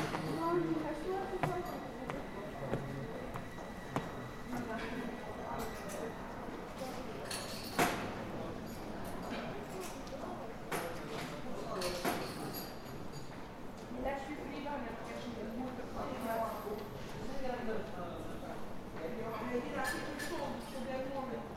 Train station, Aarau, Schweiz - Trainstationminusone
At the renovated minus one level of the Aarau train station: in this very clean sourrounding several noises are audible.